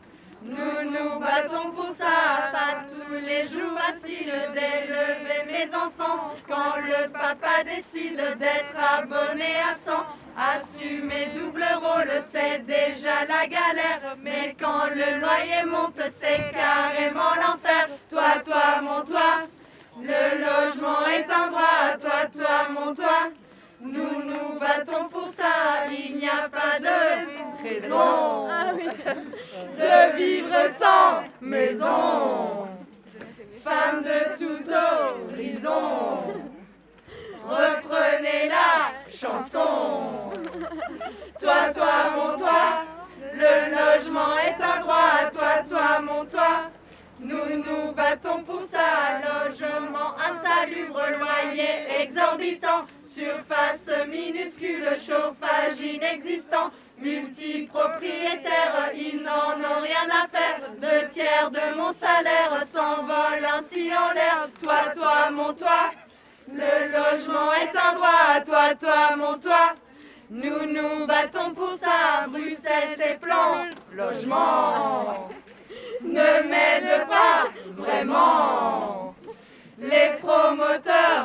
Rue Charles Demeer, Bruxelles, Belgique - a text sung by women in front of a new occupation
There are a lot of empty buildings in Brussels, so we occupy!
Housing is not a commodity, it is a fundamental right
This building was opened by the Campagne de Réquisition Solidaire
And the text of the music given by Angela D, an association where women offer solutions for access to housing for all
Région de Bruxelles-Capitale - Brussels Hoofdstedelijk Gewest, België / Belgique / Belgien, 16 April 2021